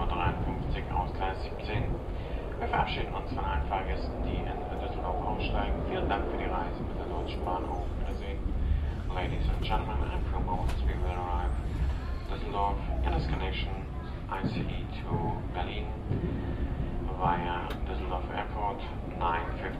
Aussteigen in der verbotenen Stadt? NIEMALS!!! / Leave the train in the Forbidden City? NEVER!!!
Durchsage, DB, Köln-Hamburg, Announcement, DB, Cologne-Hamburg